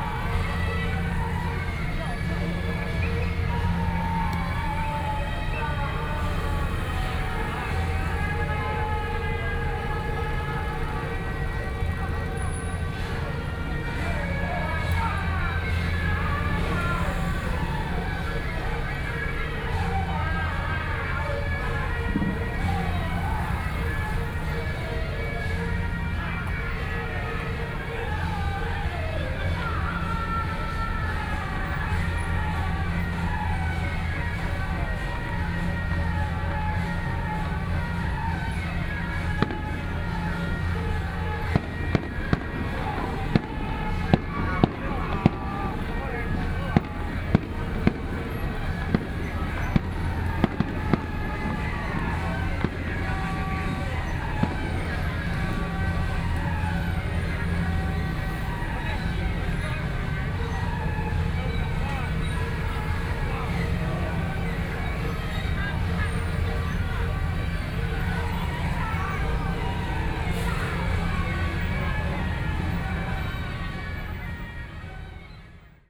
Ziyou 3rd Rd., Zuoying Dist. - Traditional temple festivals
Traditional temple festivals, Fireworks sound, Traffic Sound
Sony PCM D50+ Soundman OKM II